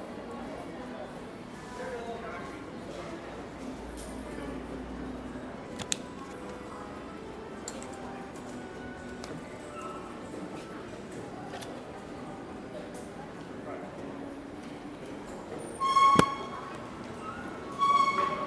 tottenham hale
train station.
changing from stansted express to the london tube.
recorded july 18, 2008.